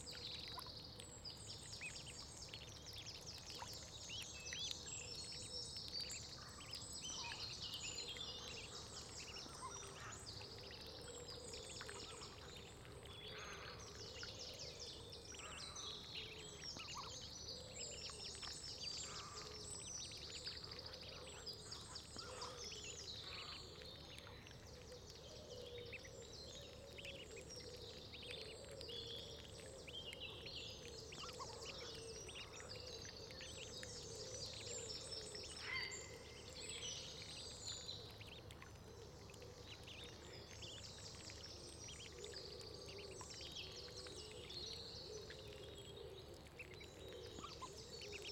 Atlantic Pond, Ballintemple, Cork, Ireland - Ducklings Feeding
A mother duck bringing her nine ducklings to feed in a small muddy puddle in the grass on the edge of the pond. The ducklings are very quiet at the start of the recording as I wanted to keep the sense of them approaching, but by the middle they're right up next to the microphone. I'd seen them use this puddle the previous day so in the morning I left my microphone there and waited for them to come along. Their cheeps, wing splashes, beak snaps and bloops, and the sounds the mother uses to talk to her chicks are all amazing. While they were feeding two hooded crows flew over (to try and catch a duckling for breakfast). Mother duck chased them away, and you can hear me running across to scare the crows too. Recorded with a Zoom H1.